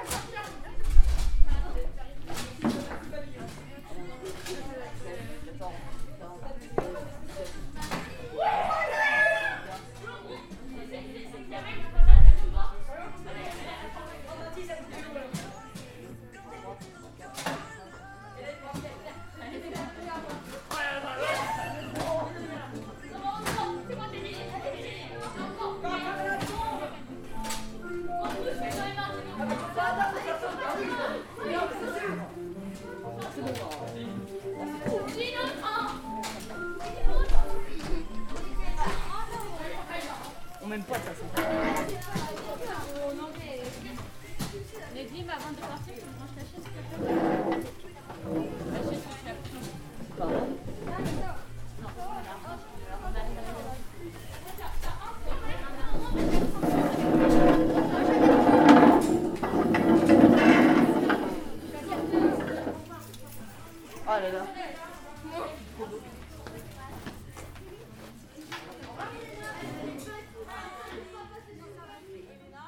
Rue du Stade, Piney, France - Le foyer au collège des Roises
Les sons du babyfoot, de la radio et de collégiens de Piney un vendredi matin.